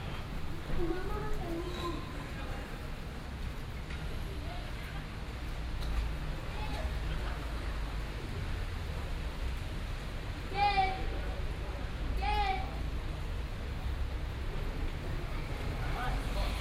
Löhrrondell, square, Koblenz, Deutschland - Löhrrondell 3
Binaural recording of the square. Third of several recordings to describe the square acoustically. More remote, people waiting, entering a shop, leaving, talking on the phone.